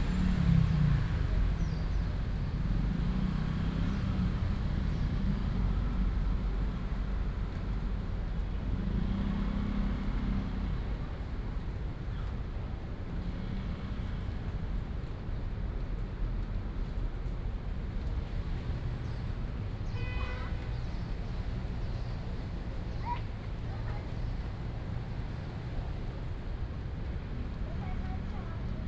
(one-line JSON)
{"title": "和安公園, Da’an Dist., Taipei City - in the Park", "date": "2015-07-30 16:21:00", "description": "in the Park, Raindrop, After the thunderstorm", "latitude": "25.03", "longitude": "121.54", "altitude": "17", "timezone": "Asia/Taipei"}